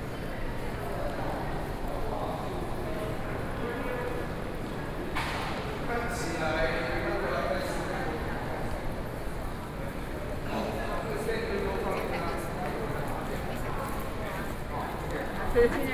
National Taiwan University Hospital, Taipei City - SoundWalk
Zhongzheng District, 台大醫院, 9 October